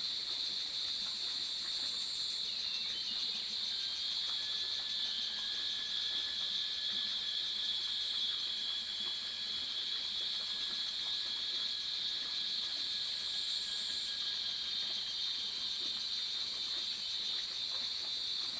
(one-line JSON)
{"title": "華龍巷, 魚池鄉五城村, Nantou County - Morning woods", "date": "2016-06-08 06:47:00", "description": "Cicadas cry, Bird sounds, Small streams\nZoom H2n MS+XY", "latitude": "23.92", "longitude": "120.88", "altitude": "747", "timezone": "Asia/Taipei"}